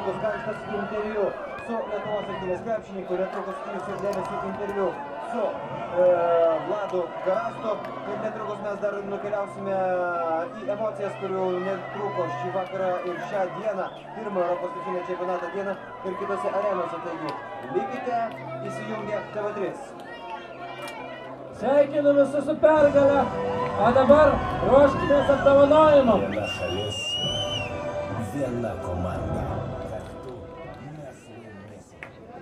Lithuania, Utena, basketball on the big sreen
the firs lithuanian religion: basketball. European championship 2011: Lithuania - UK. This was shown on the big screen, outside. The closing minutes of the game.